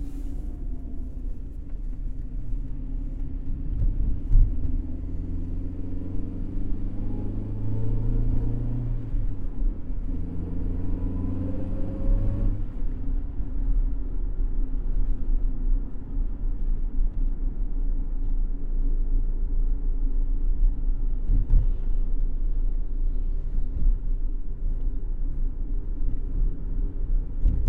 Rijeka, Croatia, Drive Around Block - Drive Around Block